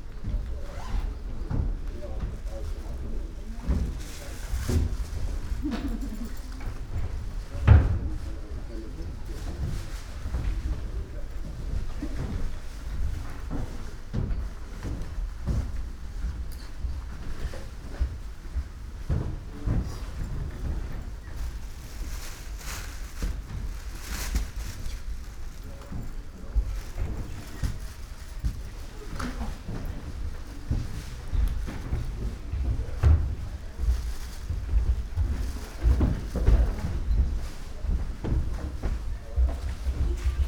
dry garden, veranda, Tofukuji, Kyoto - facing wavy gravel ocean
gardens sonority
wooden floor, steps, murmur